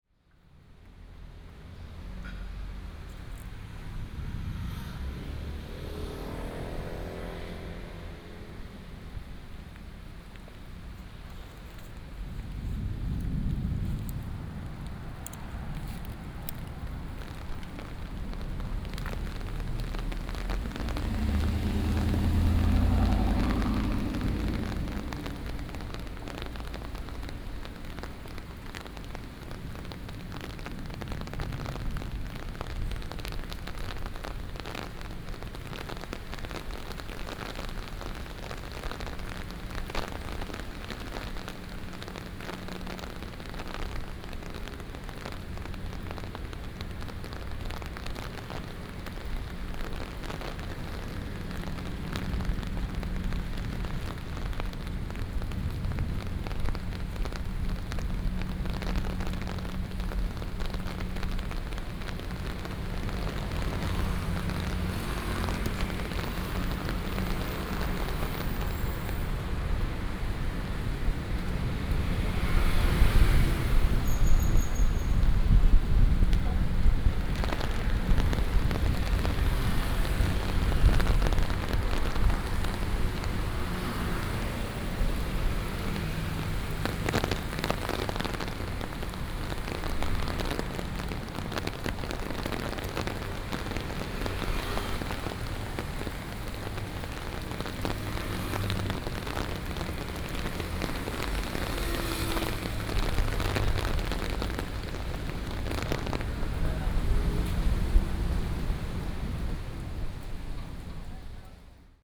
Ln., Sec., Anhe Rd., Da’an Dist., Taipei City - Walking in the rain
Thunder, Upcoming rain, traffic sound